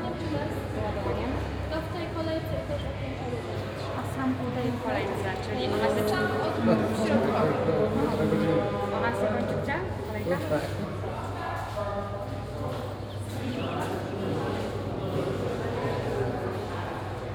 a hollow, concrete ticket counter hall at the Sobieskiego bus depot. swirling lines of people waiting for their new, electronic ticket card. impatient conversation slur in the high space.